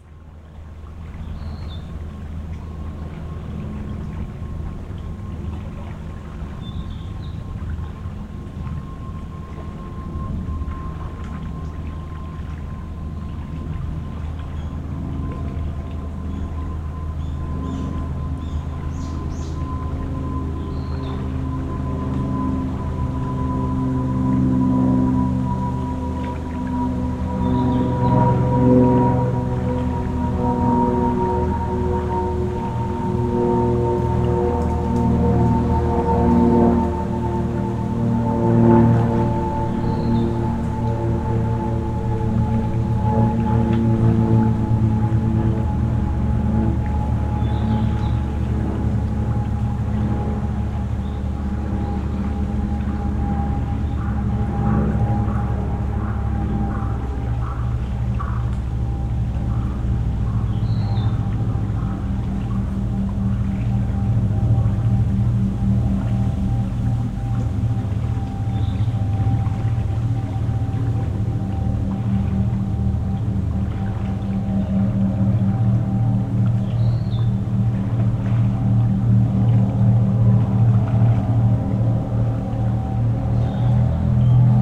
Okishimacho, Omihachiman, Shiga Prefecture, Japan - Airplane and Boat at Lake Biwa near Okishima
Small airplane and fishing boat recorded from the shore of Lake Biwa along Shiga Roiute 25 in Okishima-cho, Omihachiman CIty, Shiga Prefecture, Japan. Recorded with an Audio-Technica BP4025 stereo microphone and a Tascam DR-70D recorder.